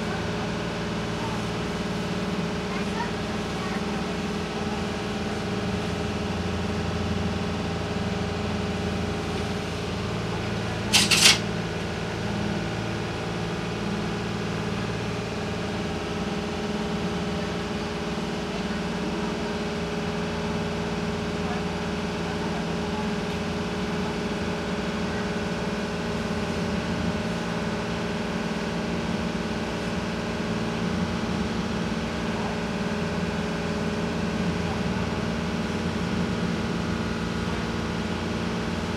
Public boat around de city of Geneva. In the winter and the roof its close. We can hear the motor only and some noises makes by the driver (chair, and automatic door). A little girl speak in french and talk about the boat. The trip is short across "La Rade"
Zoom H1n